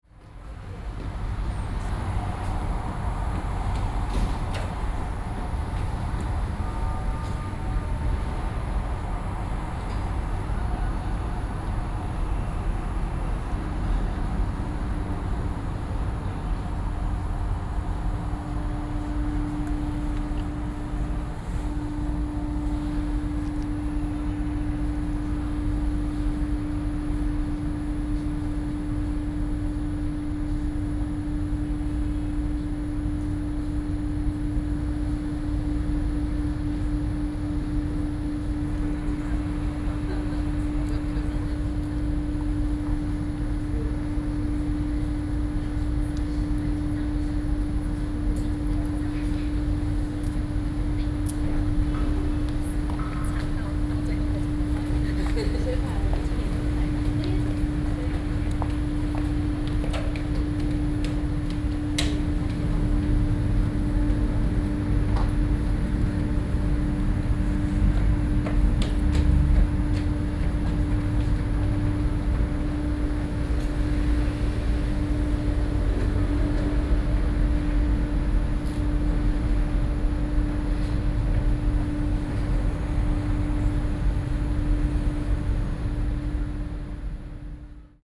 Ambient noise, Outside the community center, Sony PCM D50 + Soundman OKM II
台北市 (Taipei City), 中華民國